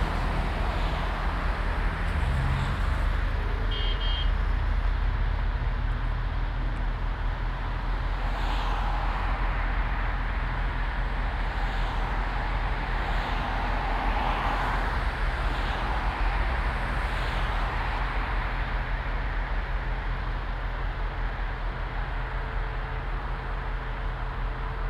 Olof-Palme-Damm, Kiel, Deutschland - Traffic and road works
Traffic on and below a bridge, one lane below the bridge is closed because of road works, trucks delivering asphalt waiting for discharging.
Binaural recording, Zoom F4 recorder, Soundman OKM II Klassik microphone